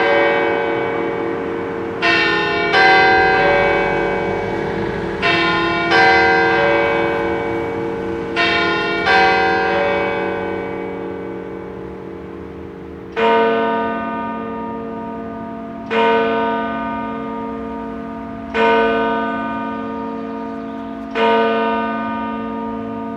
Wil, Switzerland - Street sounds and 8am church bell
Wil waking up on a Sunday morning. Cars passing, mopeds and the 8am church bell.
24 May 2012